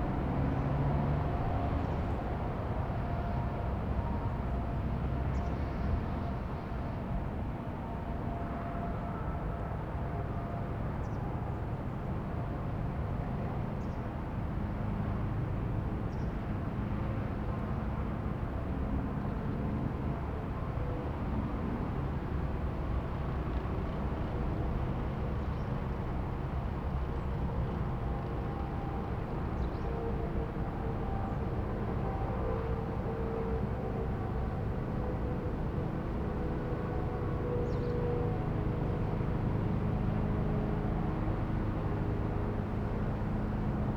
osterfeld: autobahnraststätte - the city, the country & me: motorway service area
truck parking area
the city, the country & me: october 14, 2010
Krauschwitz, Germany